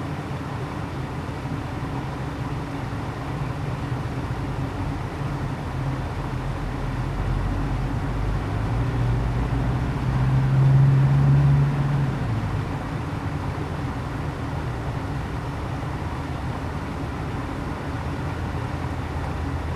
Rte des Bauges, Entrelacs, France - Résonances
Le pont du Montcel au dessus du Sierroz, je place le ZoomH4npro dans un tuyau d'écoulement à sec, qui joue le rôle d'un filtre résonateur passe bande, colorant les bruits de l'eau et du passage des véhiculs.
Auvergne-Rhône-Alpes, France métropolitaine, France, 2 August 2022